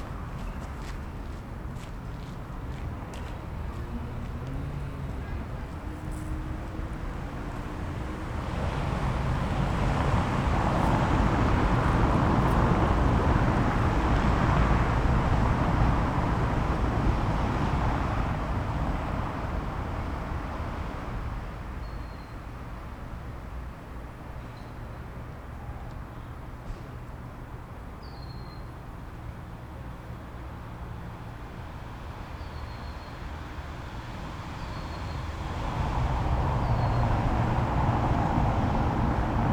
berlin wall of sound-former deathstrip, harzerstr. j.dickens 020909
Berlin, Germany